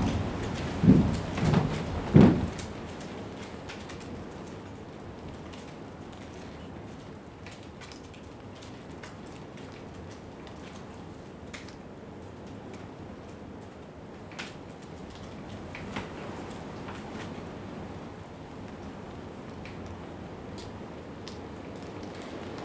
Ackworth, West Yorkshire, UK - Hiding from the wind
Sheltering from the wind in a storage container, you can hear the wind hitting against the side of the container, strips of plastic whipping around in the wind, and sometimes the sound of dry grass.
(Zoom H4n)
15 January, 14:42